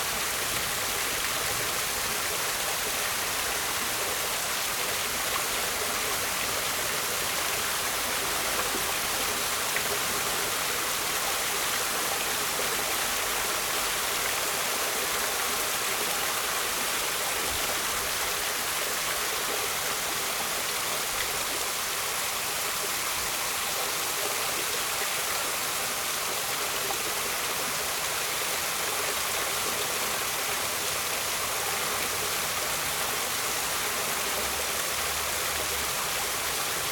Lisbon, Portugal - Luminous Fountain (R side), Lisbon
Luminous Fountain in Alameda, Lisbon.
Zoom H6
Lisboa, Portugal, 19 July 2015, 23:33